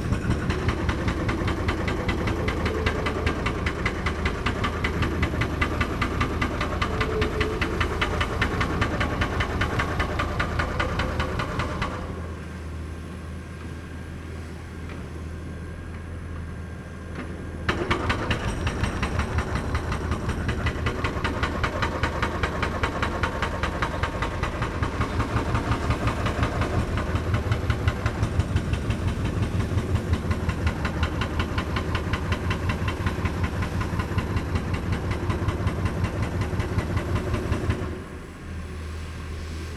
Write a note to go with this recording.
excavator with mounted jackhammer demolishes the foundation of a supermarket, the city, the country & me: february 3, 2012